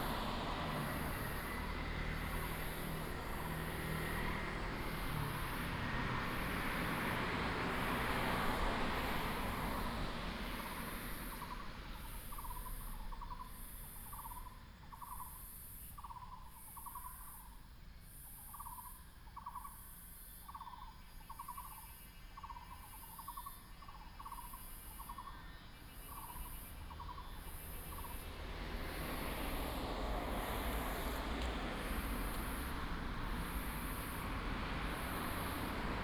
zoo zoo cafe, Fuxing Dist., Taoyuan City - Cicada and birds sound
Cicada cry, traffic sound, Birds
Taoyuan City, Taiwan, 10 August, 16:59